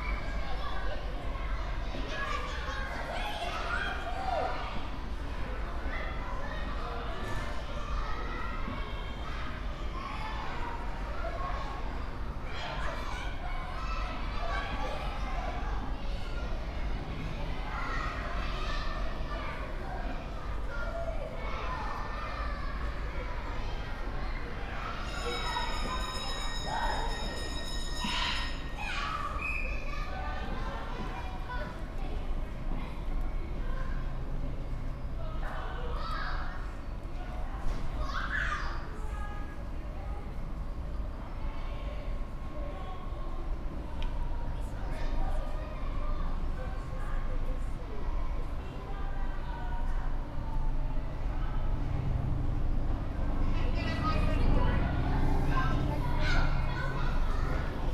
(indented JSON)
{
  "title": "St. Mary Abbots C of E Primary School, Kensington Church Ct, Kensington, London, UK - St. Mary Abbots playground time",
  "date": "2019-05-01 12:23:00",
  "latitude": "51.50",
  "longitude": "-0.19",
  "altitude": "18",
  "timezone": "GMT+1"
}